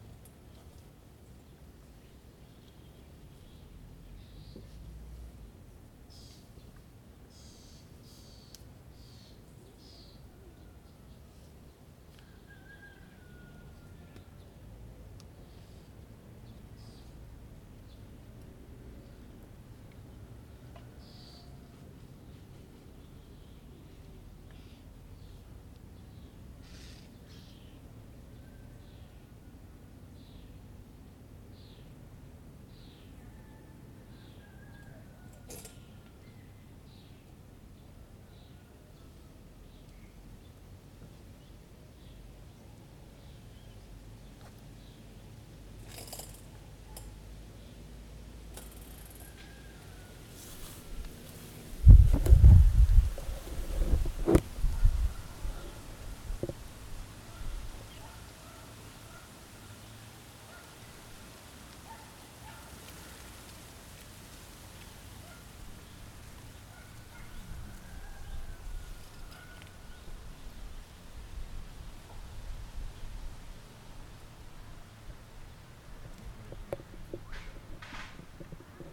Ukraine / Vinnytsia / project Alley 12,7 / sound #2 / fishermen